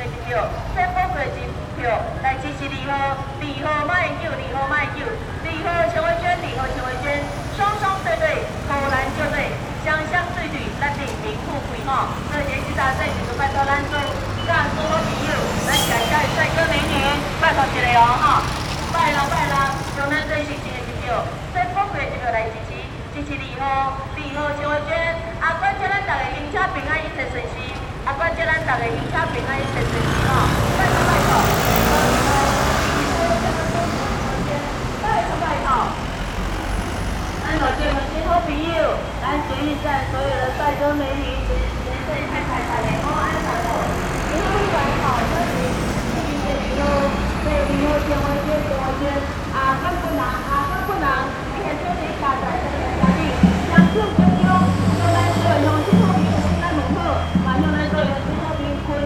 Sanmin Rd., Luzhou Dist., New Taipei City - Election-related Activities publicity
New Taipei City, Taiwan, January 2012